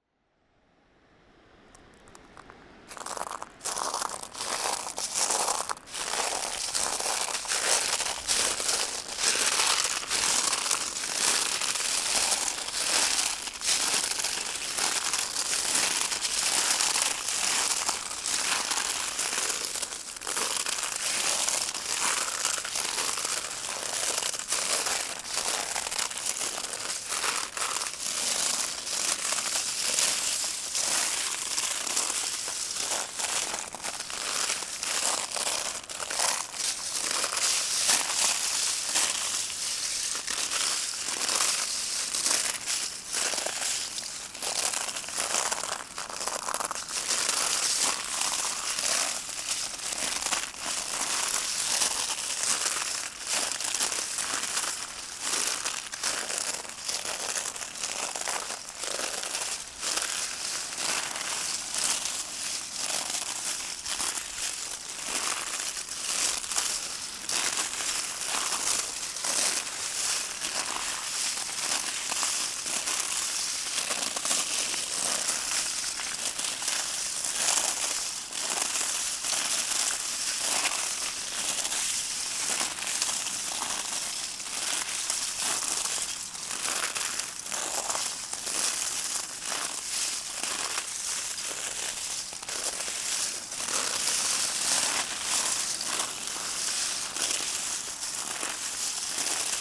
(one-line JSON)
{"title": "Noordwijk, Nederlands - Walking on shells", "date": "2019-03-30 12:30:00", "description": "Walking on shells. It's an accumulation of Solen.", "latitude": "52.26", "longitude": "4.44", "timezone": "Europe/Amsterdam"}